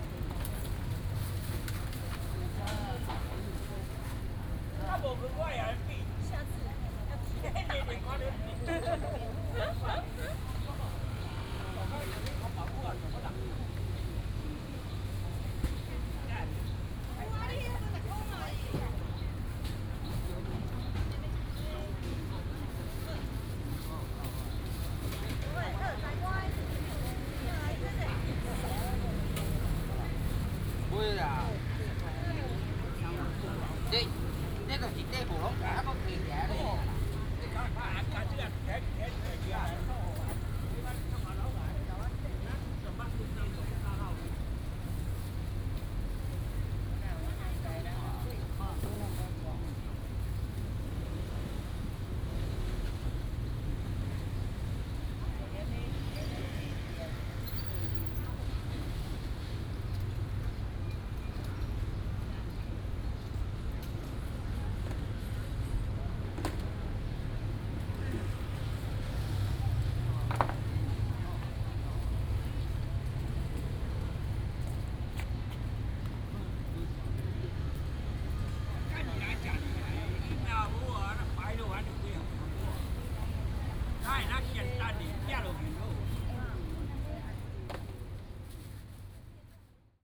Vendors
Binaural recordings
Sony PCM D100+ Soundman OKM II
Taipei City, Taiwan, 17 July 2015, 08:55